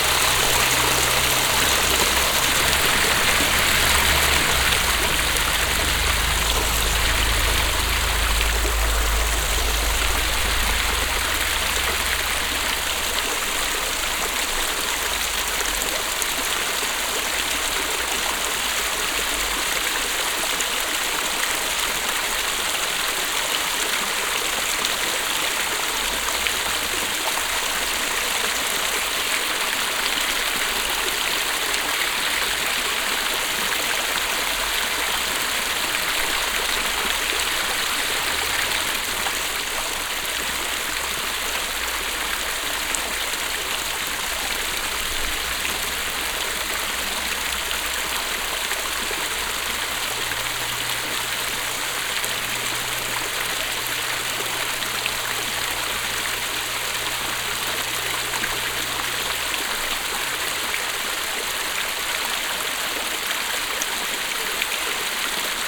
enscherange, rackesmillen, water behind dam
At the mills dam. The sound of the mill stream water floating thru and over the closed dam gates.
Enscherange, Staudamm, Wasser hinter Damm
Auf dem Staudamm. Das Geräusch des Wassers das übr und durch die geschlossenen Dammplatten fließt.
Enscherange, Rackes Millen, l’eau derrière le barrage
Le barrage du moulin. Le bruit de l’eau du ruisseau du moulin coulant à travers et par dessus les portes fermées du barrage.
Enscherange, Luxembourg, 23 September 2011, 18:23